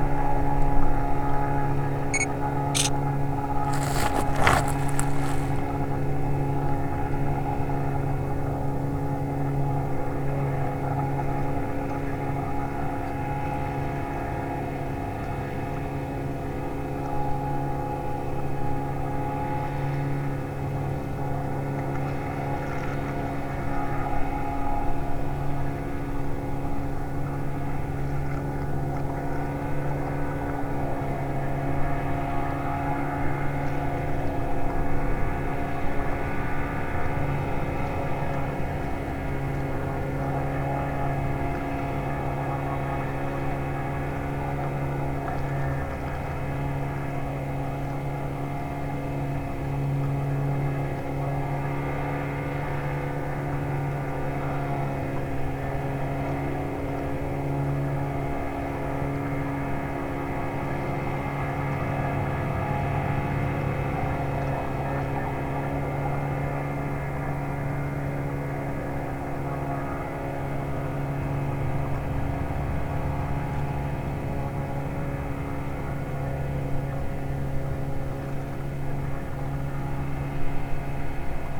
Sollefteå, Sverige - Fishing with net in the river
On the World Listening Day of 2012 - 18th july 2012. From a soundwalk in Sollefteå, Sweden. Some fishing from boats and the opposite shore (1 people in the boat and three people on the shore, fishes with a net in the river Ångermanland, in swedish this old traditional way of fishing in the river is called "dra not" in Sollefteå. WLD
Sollefteå, Sweden, 18 July 2012